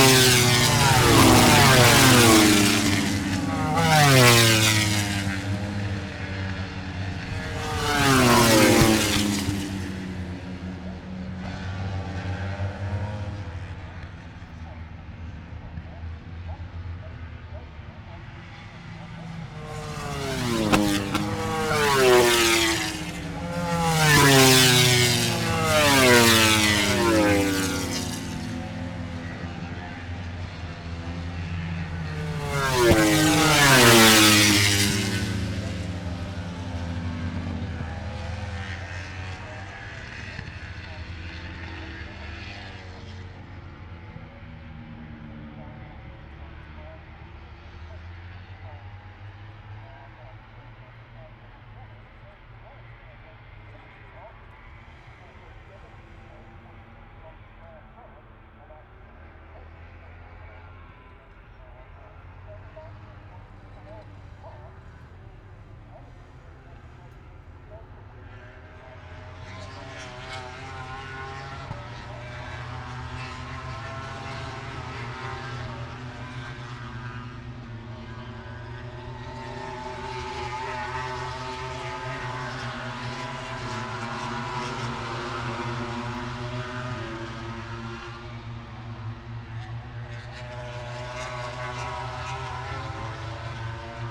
Silverstone Circuit, Towcester, UK - British Motorcycle Grand Prix 2017 ... moto grand prix ...
moto grand pix ... free practice one ... maggotts ... open lavalier mics on T bar and mini tripod ...